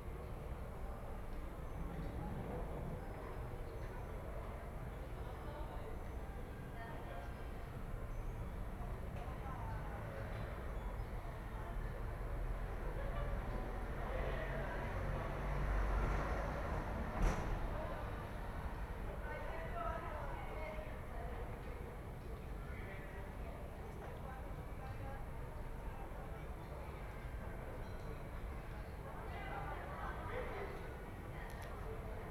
Ascolto il tuo cuore, città, I listen to your heart, city. Several chapters **SCROLL DOWN FOR ALL RECORDINGS** - Noon’s bells with dog at Easter in the time of COVID19 Soundscape
"Noon’s bells with dog at Easter in the time of COVID19" Soundscape
Chapter LXII of Ascolto il tuo cuore, città. I listen to your heart, city
Sunday April 12th 2020. Fixed position on an internal terrace at San Salvario district Turin, thirty three days after emergency disposition due to the epidemic of COVID19.
Start at 11:30 a.m. end at 00:35 p.m. duration of recording 1h:05’:00”